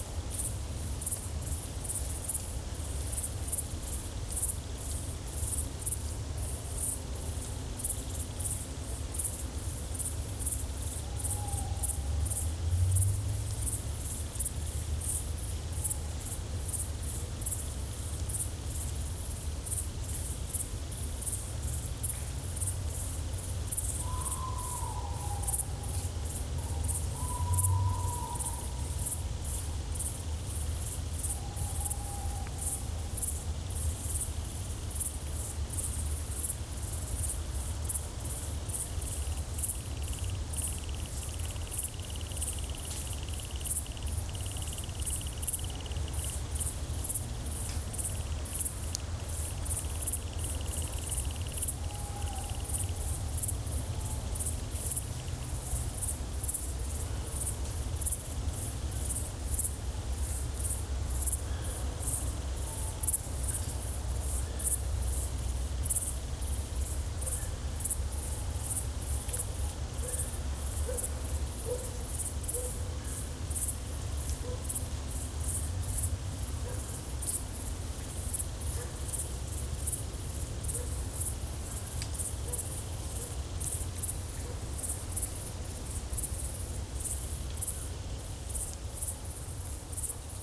Unnamed Road, Grimbosq, France - Chorus of Nocturnal Grasshoppers in the Foret de Grimbosq.
Probably a group of grieved Decticellae singing together at nightfall...
ORTF
Tascam DR100MK3
Lom Usi Pro.